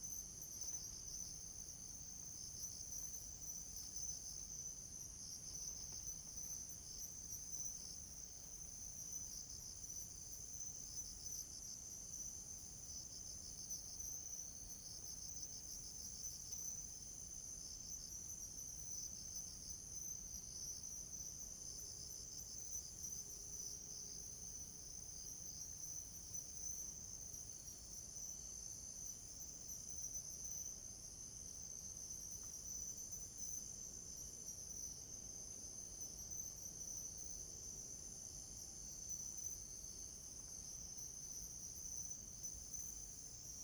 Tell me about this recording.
Insects sound, Early in the mountains, Zoom H2n MS+XY